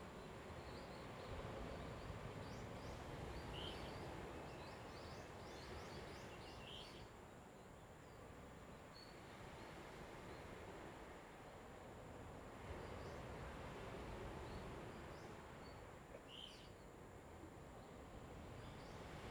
Birds singing, Traffic Sound
Zoom H2n MS +XY